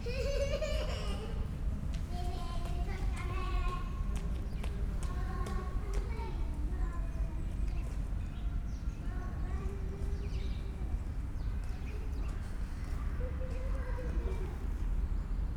Stallschreiberstraße, Berlin Kreuzberg - residential area, inner yard ambience
Stallschreiberstraße, Berlin Kreuzberg, ambience at an abandoned littel playground between the house, autumn Sunday afternoon
(Sony PCM D50, DPA4060)
Deutschland, 8 November 2020, 15:30